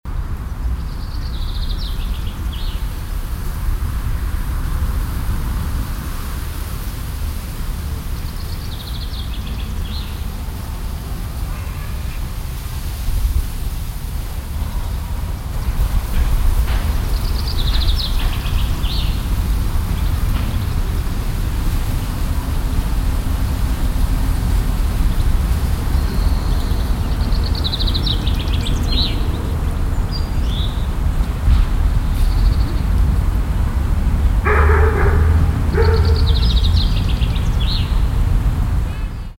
haan, stadtpark, schilf, wind, hund
morgens im stadtpark, wind im schilf, ein hund bellt
soundmap nrw:
social ambiences, topographic fieldrecordings